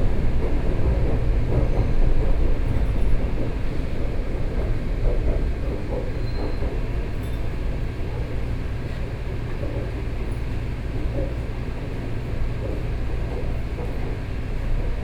Beitou, Taipei City - MRT
Inside the MRT, from Fuxinggang Station to Qiyan Station, Sony PCM D50 + Soundman OKM II
1 September 2013, ~16:00